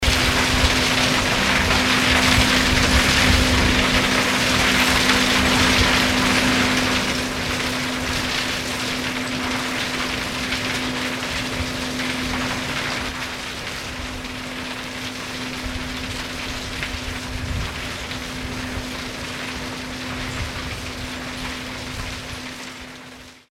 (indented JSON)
{
  "title": "wülfrath, abbaugelände fa rheinkalk, kieseltranspo - wülfrath, abbaugelände fa rheinkalk, kieseltransport",
  "date": "2008-06-24 22:38:00",
  "description": "früjahr 07 nachmittags kieseltransport auf transportband in europas grösstem kalkabbaugebiet - hier direkt - monoaufnahme\nproject: :resonanzen - neandereland soundmap nrw - sound in public spaces - in & outdoor nearfield recordings",
  "latitude": "51.30",
  "longitude": "7.01",
  "altitude": "168",
  "timezone": "Europe/Berlin"
}